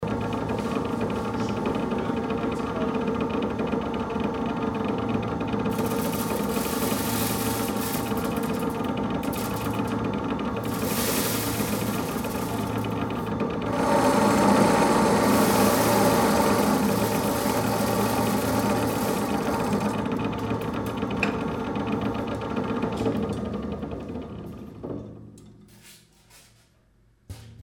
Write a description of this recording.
Next the sound of the malt mill grinding the malt. Heinerscheid, Cornelyshaff, Brauerei, Schrotmühle mit Malz, Dann das Geräusch von der Schrotmühle, die das Malz mahlt. Heinerscheid, brasserie, remplissage du malt, Son suivant : le malt moulu dans le moulin.